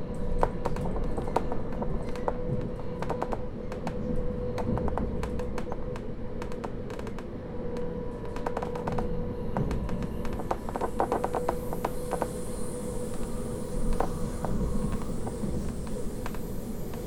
Train from Ehrenburg to St. Lorenzen - Trainsounds
The sounds of a train between two stations
26 April 2018, 18:48